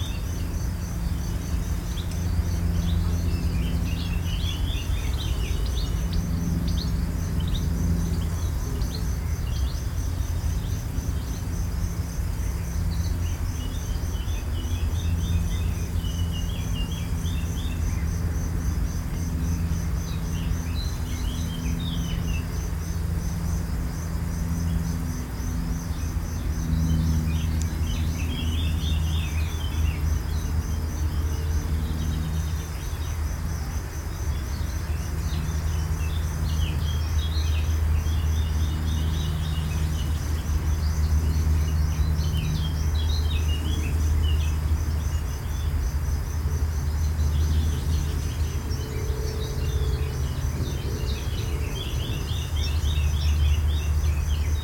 insects and ambience on a hot afternoon in petra's favorite spot in maribor